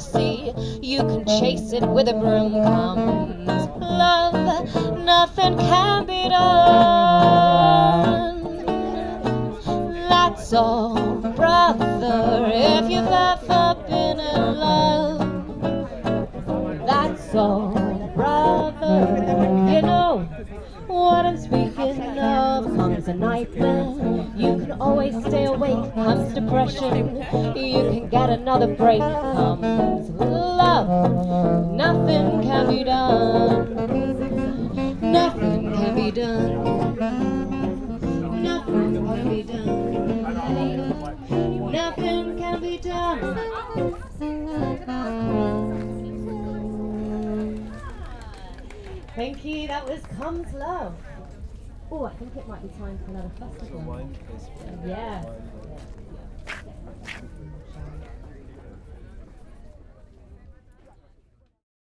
This singer performed while I was walking through Victoria Park Food Market.
MixPre 3 with 2 x Sennheiser MKH 8020s
Greater London, England, United Kingdom, 28 December 2019